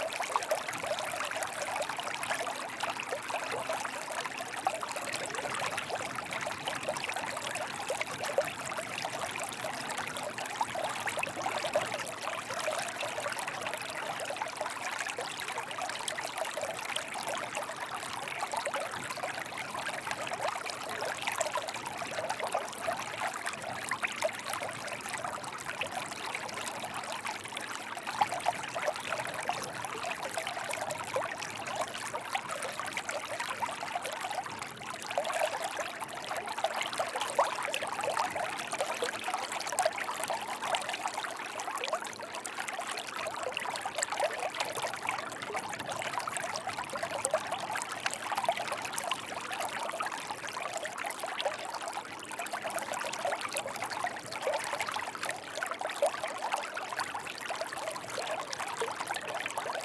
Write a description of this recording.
During low tide, crossing a "baïne" river. The "baïne" (said in french like baheen) is a geographical phenomenon unique to the Aquitaine coast of France. It takes the form of a small pool of water, parallel to the beach, directly connected to the sea. When the tide is receding, they cause a very strong current out to sea, the ground is quicksand. It's strongly dangerous. I crossed it because of residents said me I could because of the hour (very low tide), but I wouldn't do it alone.